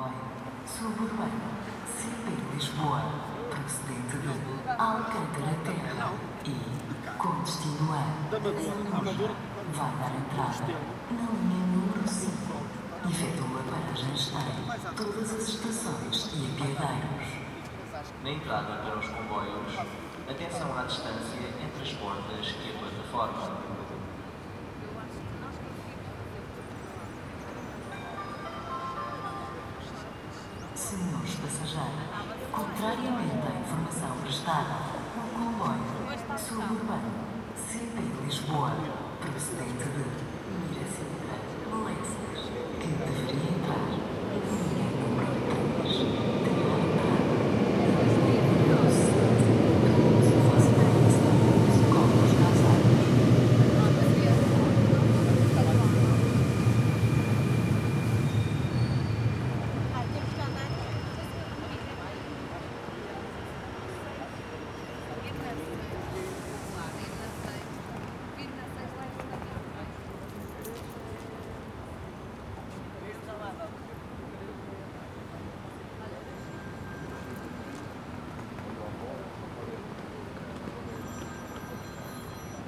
regular platform activity. waiting passengers talking, train arrives and departs on another platform, suitcase wheels rattle, phone conversations.
Lisbon, Portugal